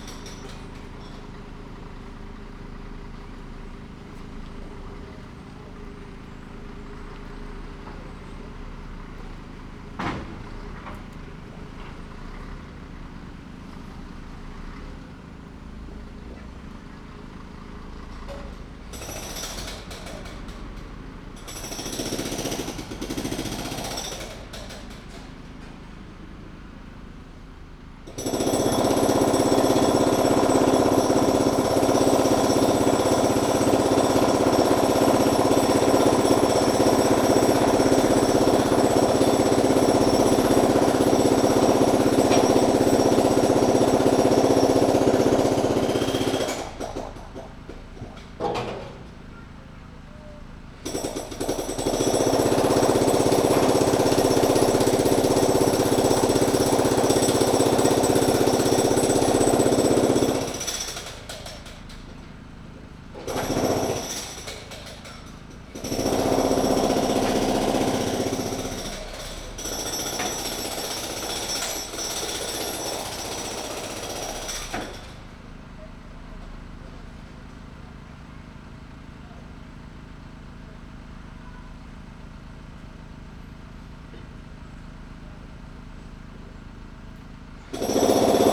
pneumatic drill crushing concrete, making space for a new sidewalk. sputter of a gas generator. (sony d50)
Poznań, Poland, September 2015